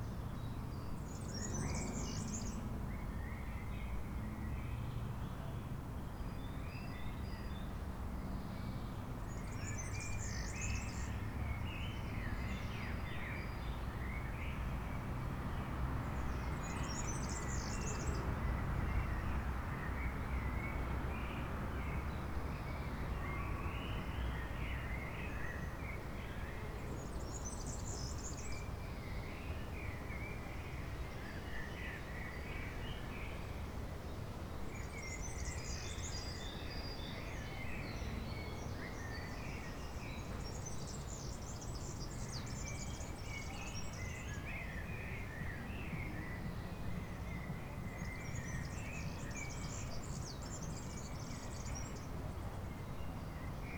berlin, bergmannstraße: friedrichswerderscher friedhof - the city, the country & me: cemetery of dorothenstadt's and friedrichswerder's congregation
cemetery ambience, birds
the city, the country & me: april 24, 2011